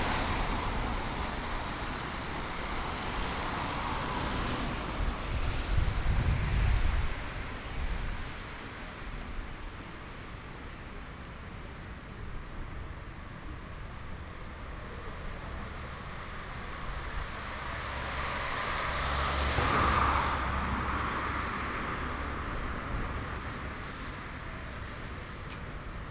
Ascolto il tuo cuore, città. I listen to your heart, city. Several chapters **SCROLL DOWN FOR ALL RECORDINGS** - “Walking in a rainy day at the time of covid19” Soundwalk
“Walking in a rainy day at the time of covid19” Soundwalk
Chapter XXIV of Ascolto il tuo cuore, città. I listen to your heart, city.
Friday March 27 2020. Walk to Porta Nuova railway station and back, San Salvario district, seventeen days after emergency disposition due to the epidemic of COVID19.
Start at 11:25 a.m., end at h. 00:01 p.m. duration of recording 36’11”
The entire path is associated with a synchronized GPS track recorded in the (kml, gpx, kmz) files downloadable here: